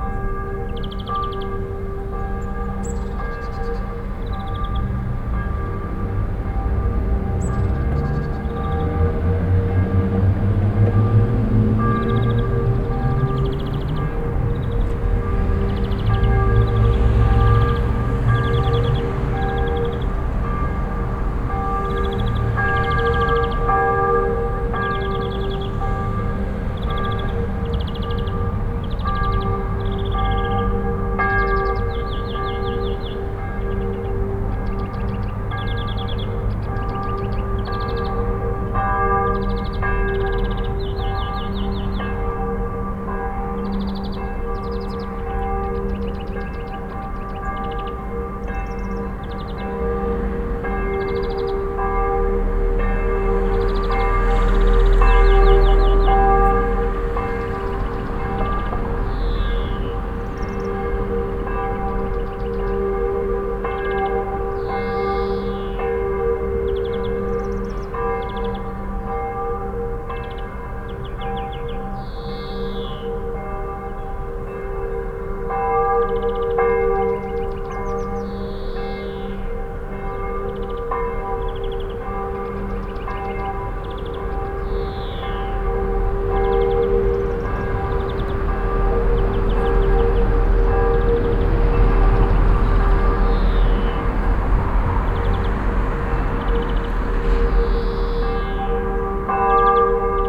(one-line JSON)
{"title": "Lange Str., Hamm, Germany - two bells competing", "date": "2015-04-12 19:00:00", "description": "twice a day a mix of (at least) two church bells can be heard in an ever changing never identical mix", "latitude": "51.67", "longitude": "7.80", "altitude": "65", "timezone": "Europe/Berlin"}